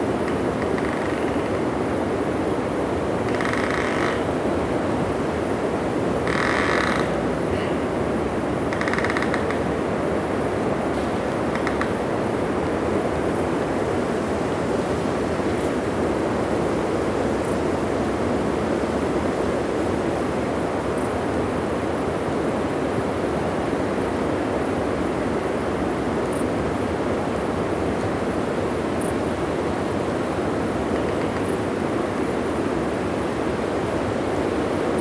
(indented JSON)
{
  "title": "Neringos sav., Lithuania - Nida Forest at Night",
  "date": "2016-08-02 02:19:00",
  "description": "Recordist: Saso Puckovski. Calm night inside the forest, woodpeckers, nocturnal insects, wind. Recorded with ZOOM H2N Handy Recorder.",
  "latitude": "55.31",
  "longitude": "20.99",
  "altitude": "16",
  "timezone": "Europe/Vilnius"
}